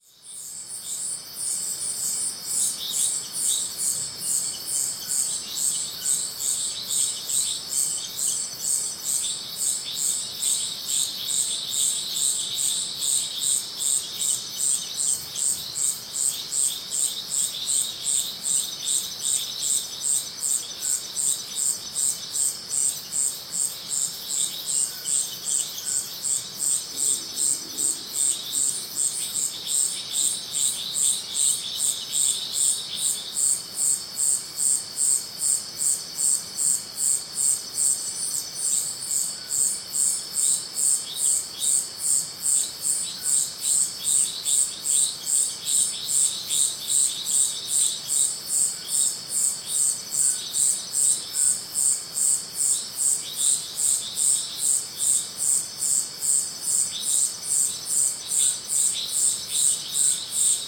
Iracambi - loud declination
recorded at Iracambi, a NGO dedicated to preserve and grow the Atlantic Forest
Muriaé - MG, Brazil, 12 January